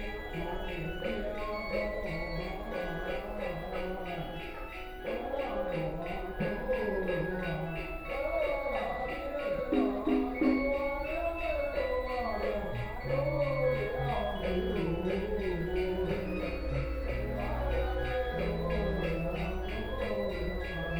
馬崗漁村, New Taipei City - Funeral sounds
Funeral sounds, Small fishing village, Traffic Sound, Very hot weather
Sony PCM D50+ Soundman OKM II